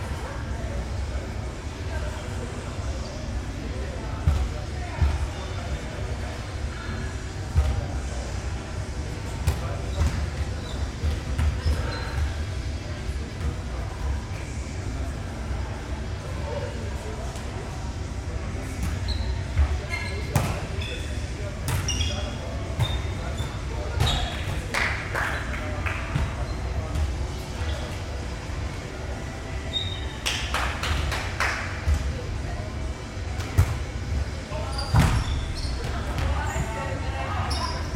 volleyball players in the CAU sporthall